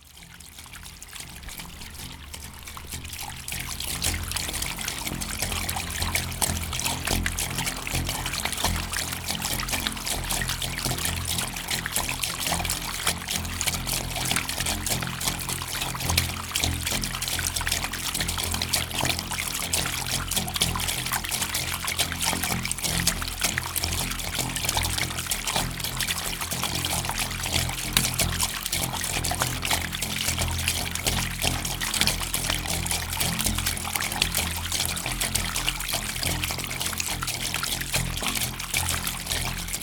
Srem, Andrews house, rumbling drainpipe during storm
Srem, Poland